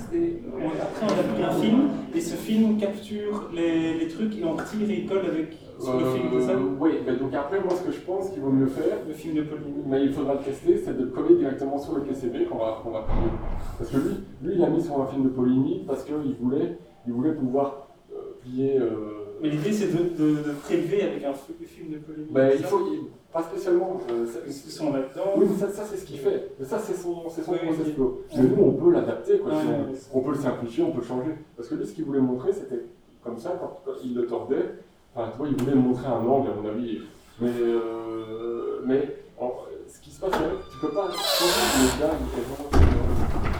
Quartier du Biéreau, Ottignies-Louvain-la-Neuve, Belgique - Technical debate
Two persons discussing in the corridors, about something complicate and very technical. I seems it's about three-dimensional printers.